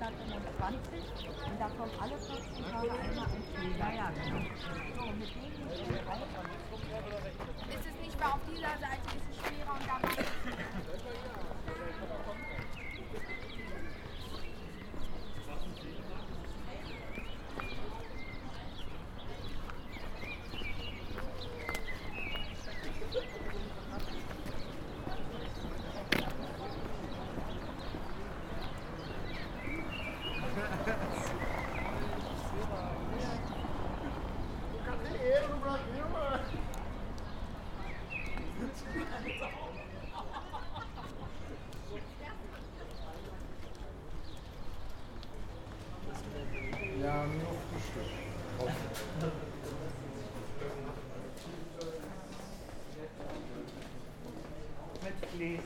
Kiautschoustraße, Berlin, Duitsland - A minute before entering Restaurant Fünf & Sechzig
Zoom H6 - XY mic 120°
Beautiful weather
Deutschland, 6 April